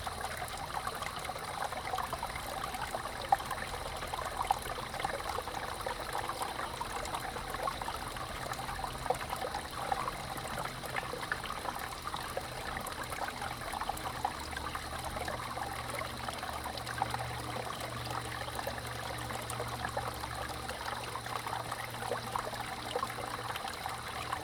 Paper Dome 紙教堂, Nantou County - Water sound
Water sound
Zoom H2n MS+XY
Puli Township, 桃米巷52-12號